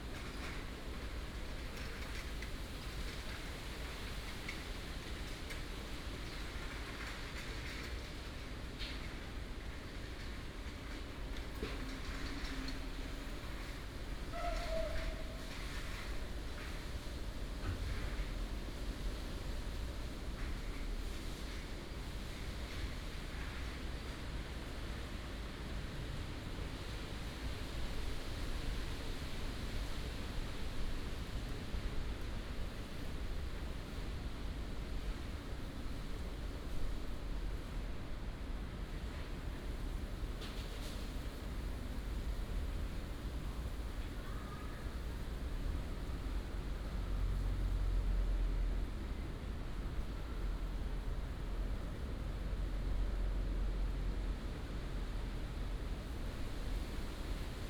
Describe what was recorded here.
in the Park, wind and Leaves, Dog, Binaural recordings, Sony PCM D100+ Soundman OKM II